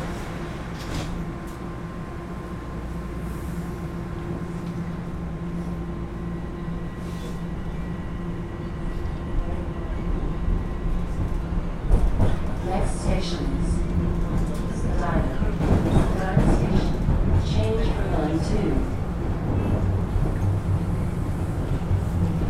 St Patrick Station, Toronto, ON, Canada - Toronto Subway, from St. Patrick to Spadina
Recorded while taking a TTC subway train from St. Patrick station to Spadina station.
Ontario, Canada, 9 October 2019